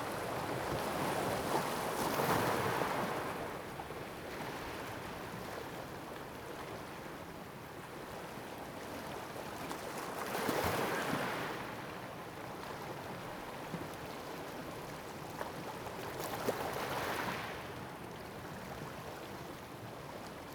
椰油村, Koto island - sound of the waves
At the beach, sound of the waves
Zoom H6 +Rode Nt4